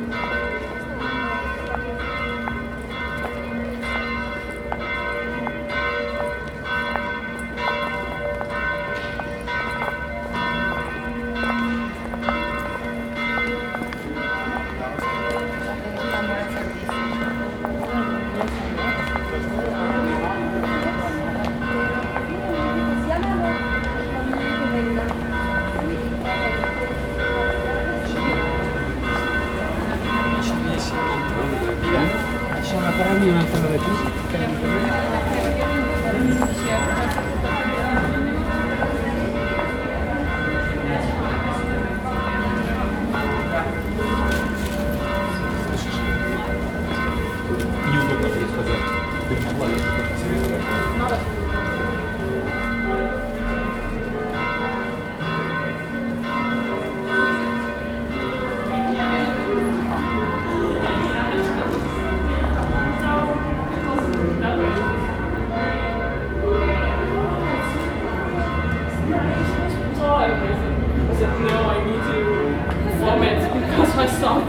Tyn - Tyn Bells
Bells ringing at 6pm on a Sunday
Praha 1-Staré Město, Czech Republic, 12 April